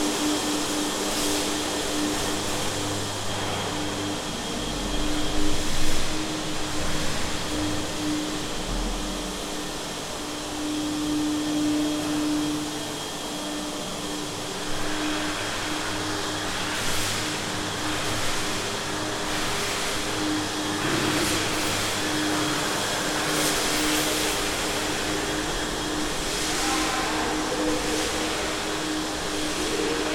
Heavy sound of the cleanning a hallway at Convento de Cristo in Tomar, also voices and resonation of the space. Recorded with a pair of Primo 172 capsules in AB stereo configuration onto a SD mixpre6.
Tomar, Portugal, 20 October 2017